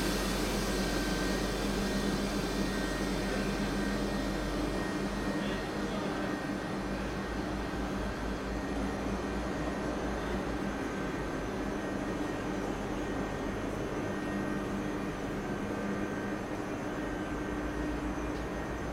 Amiens, France - Amiens station
While traveling, we were in the Amiens station on a Sunday morning. There’s a lot of people, discussing quietly. In first, the station hall, with a piano player. After, walking in the escalators of the two levels station and the path to the platform 7. At the end, the train passengers, the engine and finally the train to Paris leaving Amiens.